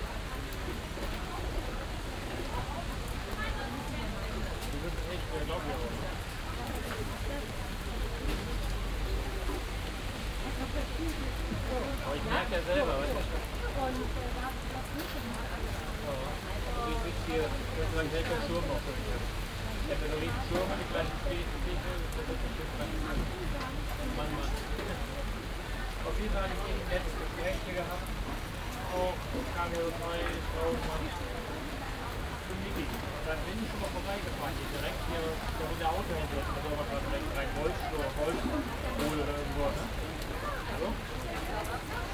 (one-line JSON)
{"title": "refrath, markplatz, wochenmarkt, stand pütz", "description": "morgens im regen unter schirmen, einkäufe und mobilgespräche\nsoundmap nrw:\nsocial ambiences/ listen to the people - in & outdoor nearfield recordings", "latitude": "50.96", "longitude": "7.11", "altitude": "75", "timezone": "GMT+1"}